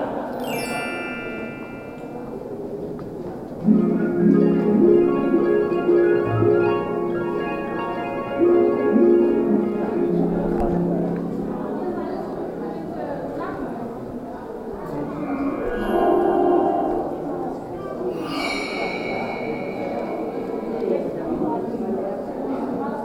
hannover, museum august kestner, installation
recording of an installation of the exhibition Oggetto Sonori about sound design - here development of digital sound design in history
soundmap d - social ambiences and topographic field recordings